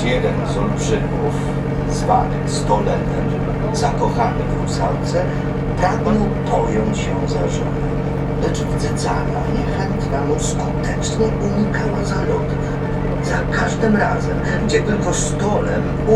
Jezioro Jelenie - Legenda, the Legend
Dźwięk nagrany podczas REjsu w ramach projektu : "Dźwiękohistorie. Badania nad pamięcią dźwiękową Kaszubów".